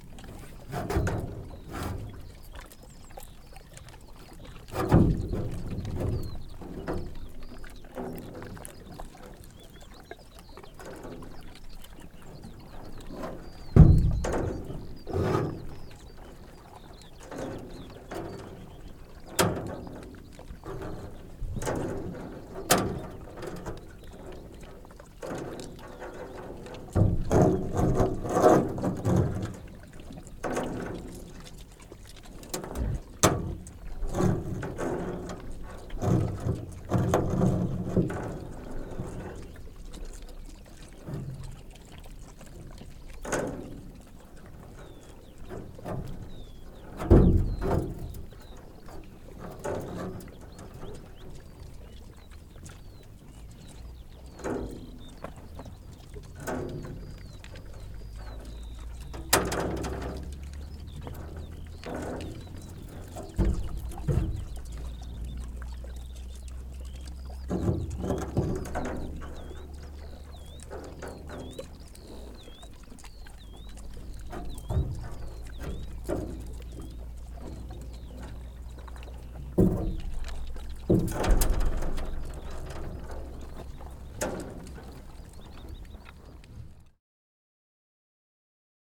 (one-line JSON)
{"title": "Woodbridge, UK - pigs chewing stones", "date": "2022-05-02 17:28:00", "description": "sows reared outdoors on an industrial scale in bare sandy soil continually, audibly chewing on stones which they drop and play with in their empty metal troughs; abnormal behaviour expressing frustration with nothing to forage, a way of managing stress and coping with a poor diet.\nMarantz PMD620", "latitude": "52.12", "longitude": "1.45", "altitude": "21", "timezone": "Europe/London"}